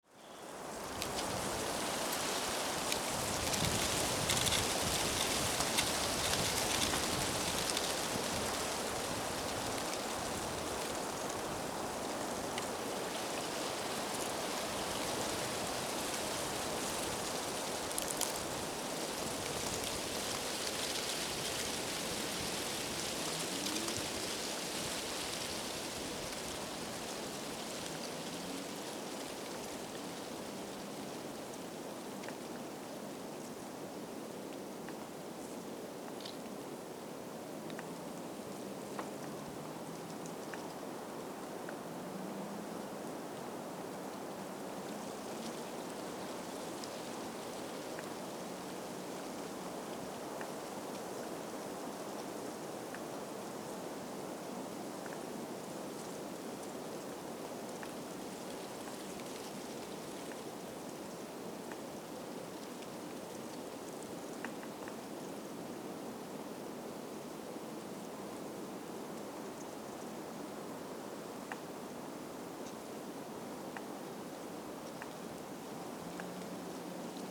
island in the frozen marsh. withered leaves on young oak tree
Lithuania, 25 January 2011, 15:30